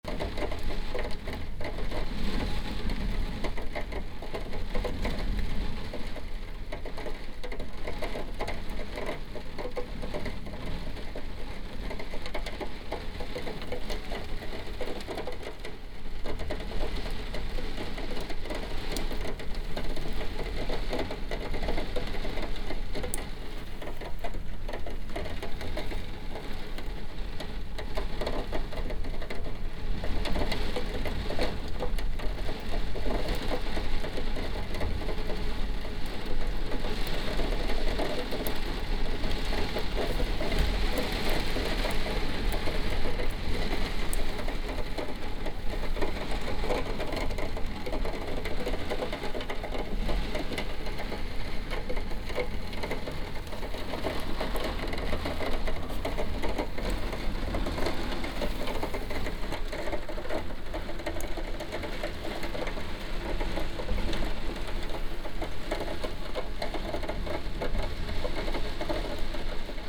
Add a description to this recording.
We experienced a stormy night during our (katrinem and I) stay on the now uninhabited island of Ursholmen. The strong wind hurls the raindrops against the window of the cabin.